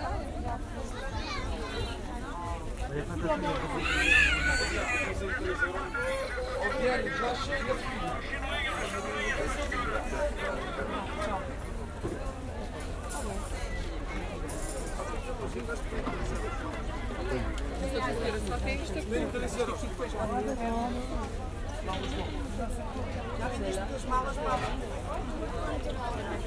Fundão, Portugal - Soundwalk Mercado do Fundão
5 August